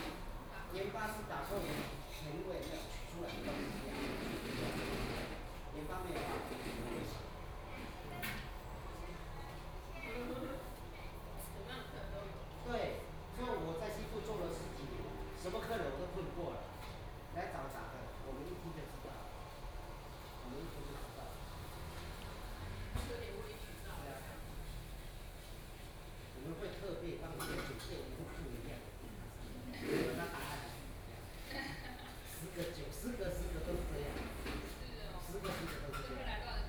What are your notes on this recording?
In the restaurant, Binaural recordings, Zoom H4n+ Soundman OKM II